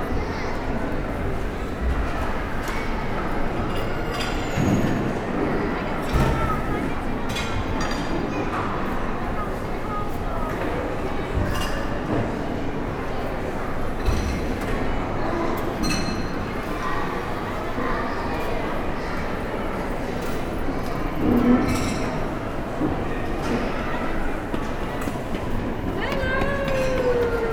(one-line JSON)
{"title": "Interior Atmosphere - The V&A Museum of Childhood, Bethnal Green, London, UK", "date": "2020-03-06 14:23:00", "description": "The wonderful reverberant interior spaces of the Childrens Museum. Recorded with a Mix Pre 6 II with 2 Sennheiser MKH 8020s", "latitude": "51.53", "longitude": "-0.05", "altitude": "19", "timezone": "Europe/London"}